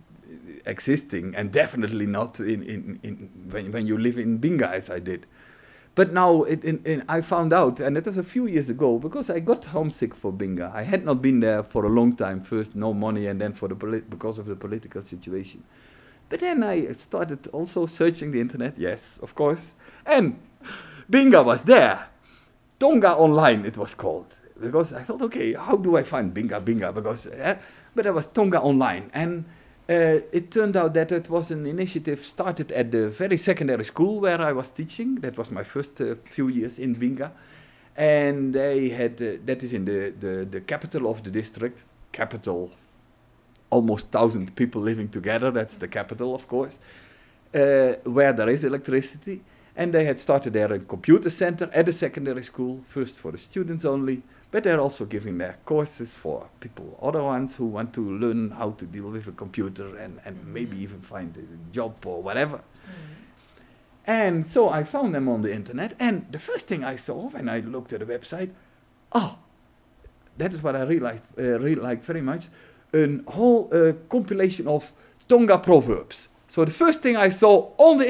Office of Rosa Luxemburg Foundation, Johannesburg, South Africa - Jos Martens no wires to Binga, but….
Jos describes the remoteness of Binga, it's non-connection to the rest of the country while he worked there; but things have moved on...
The entire interview with Jos Martens is archived here:
Randburg, South Africa, 28 April 2010